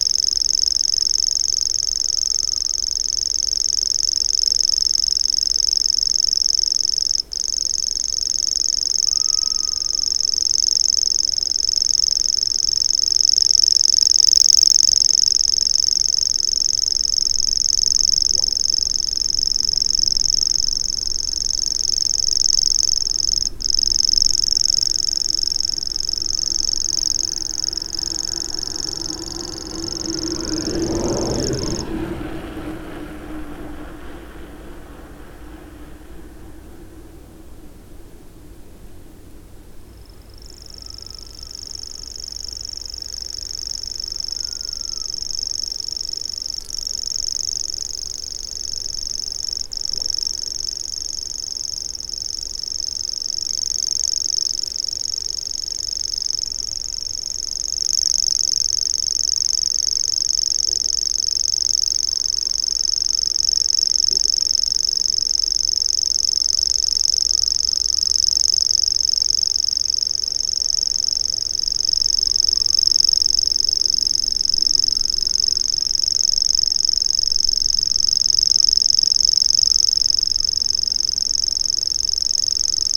Isle of Mull, UK - Grasshopper warbler and cyclist ...
Grasshopper warbler and cyclist ... sat in a ditch next to a drain ... recording a grasshopper warbler ... using a parabolic ... cyclist freewheeled by ... wonder if the bird stopped 'reeling' to listen ..?